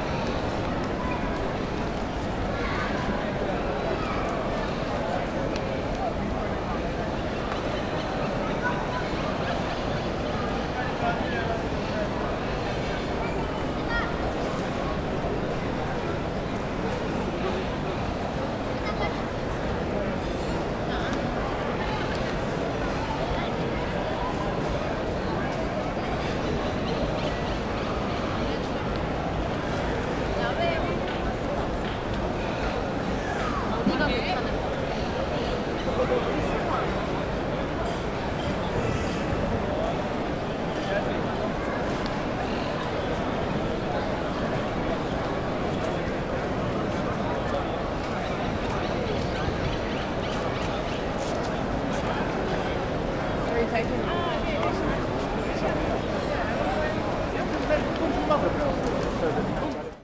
{
  "title": "Barcelona, Spain - CROWD WALLA Large Place, Tourists, Restaurant Dishes, Few Birds, Spain",
  "date": "2018-08-09 14:35:00",
  "description": "Barcelona, SPAIN\nPlaça de Reial\nREC: Sony PCM-D100 ORTF",
  "latitude": "41.38",
  "longitude": "2.18",
  "altitude": "6",
  "timezone": "GMT+1"
}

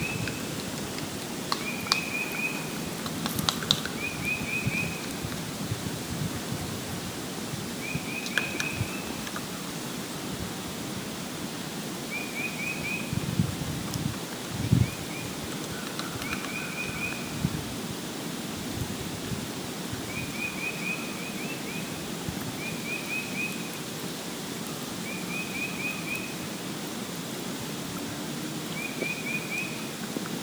{
  "title": "Vassar College, Raymond Avenue, Poughkeepsie, NY, USA - Vassar Farm, fresh snow, sunny afternoon, sounds in the woods on a footbridge over a stream",
  "date": "2015-02-22 13:30:00",
  "description": "ice falling from limbs, birds, cars nearby",
  "latitude": "41.67",
  "longitude": "-73.89",
  "altitude": "43",
  "timezone": "America/New_York"
}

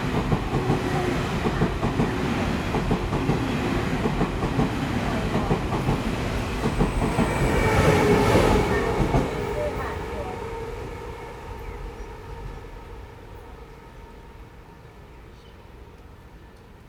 {
  "title": "Yingge Station, New Taipei City, Taiwan - In the station platform",
  "date": "2011-11-29 15:39:00",
  "description": "In the station platform, Birds singing, helicopter\nZoom H4n XY+Rode NT4",
  "latitude": "24.95",
  "longitude": "121.35",
  "altitude": "55",
  "timezone": "Asia/Taipei"
}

